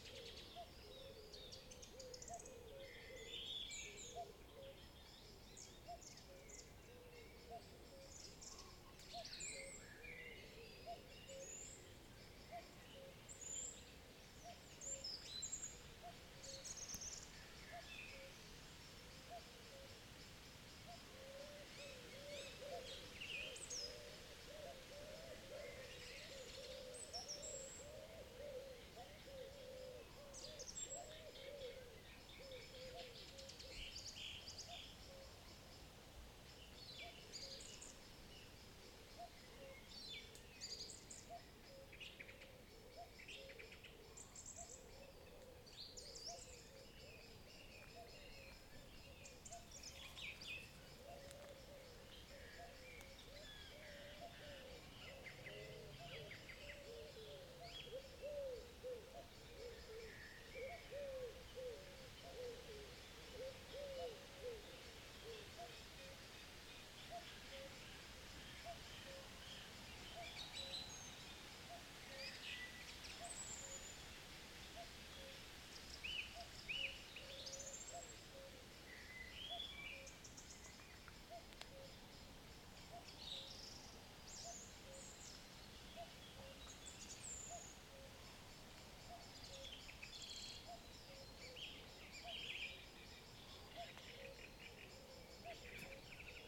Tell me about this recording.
RSPB Fowlmere nature reserve. Cuckoo, wood pigeons and other birds join the evening chorus accompanied by the light rustling of the wind in the trees and distant cars in the distance. Zoom F1 and Zoom XYH-6 Stereo capsule to record.